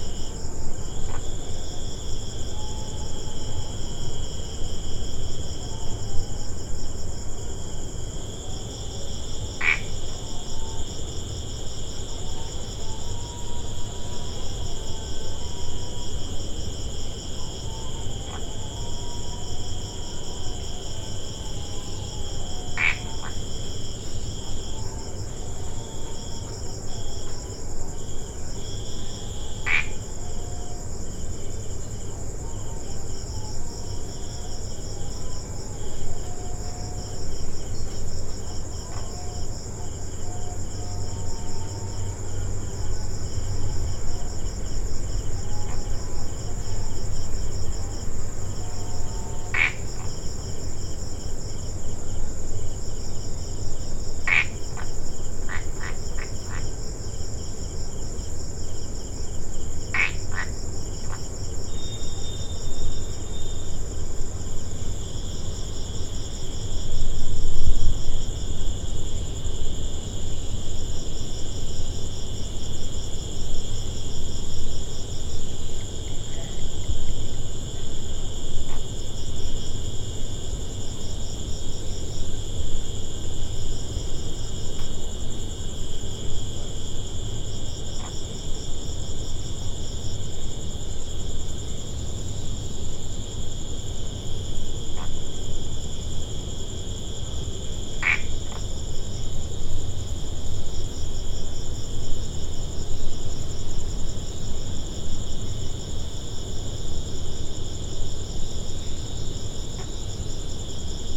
This recording was made at the courtyard of a friend's house in Cox's bazar. The house is located quite close to the sea. You hear it's constant roar at the background all the time. There was almost no wind in that evening. You hear the crickets and just one frog making calls.
কক্সবাজার জেলা, চট্টগ্রাম বিভাগ, বাংলাদেশ, June 2019